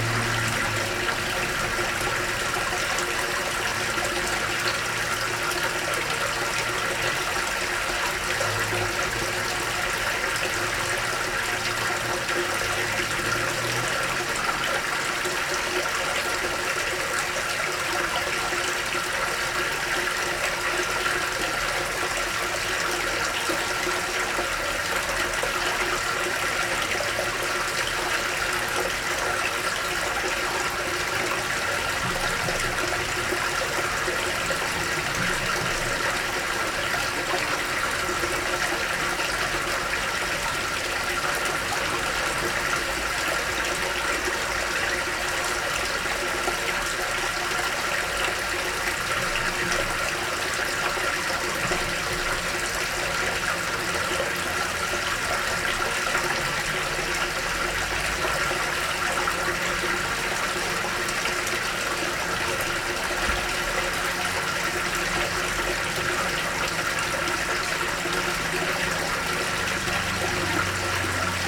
Lavoir Saint Léonard Honfleur (B2)
Lavoir Saint Léonard à Honfleur (Calvados)